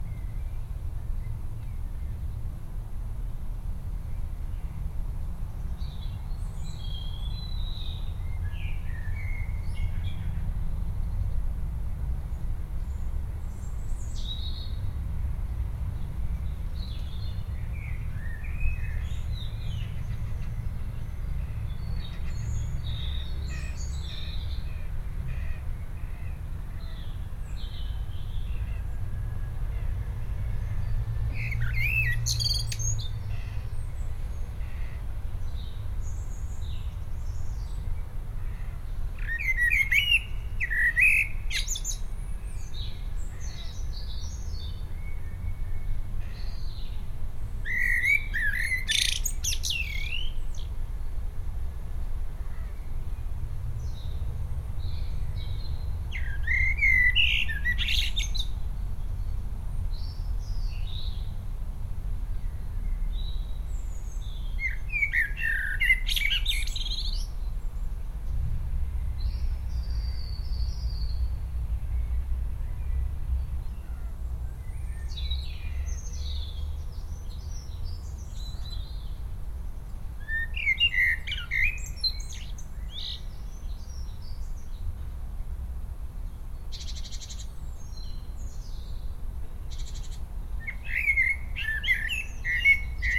Recording of the singing birds at the Sint Petrus Cementery in The Hague. Equipment used: Tascam DR100-MKlll